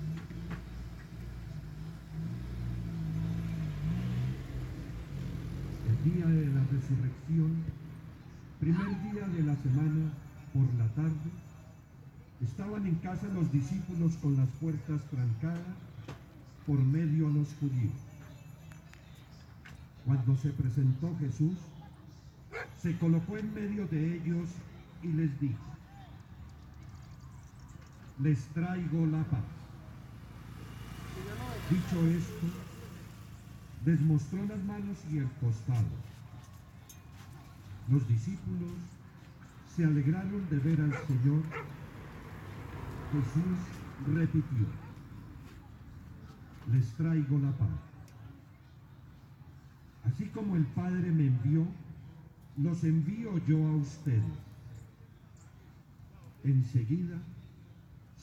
Tv., Bogotá, Colombia - ARANJUEZ VILLAS PARK. SUNDAY 11:00PM .
Aranjuez villas park. Sunday 11:00 am. religious mass in the open air, the father is praying, there is a small occasional delay and with a slightly dark feeling, there are cars and motorcycles passing by, there are people playing soccer you can hear the ball bounce and people who play screaming, an ice cream cart ringing its bell Occasionally, dogs barking, birds sing in the background, the voices of the people passing by can be heard.
Región Andina, Colombia